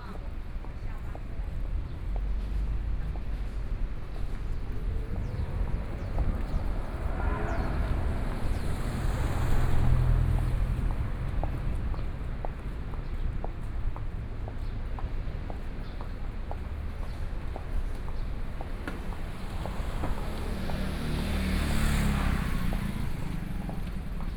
Andong St., Taipei City - Footsteps sound
Traffic Sound, Footsteps sound, Walking in the streets